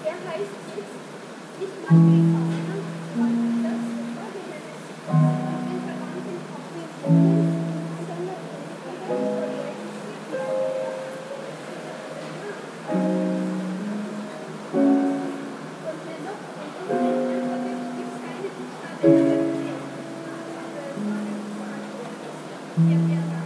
Nida, Lithuania - Lutheran Church
Recordist: Anita Černá
Description: Exterior of the Lutheran Church on a sunny day. Someone playing the piano inside, tourists walking, crickets and traffic in the distance. Recorded with ZOOM H2N Handy Recorder.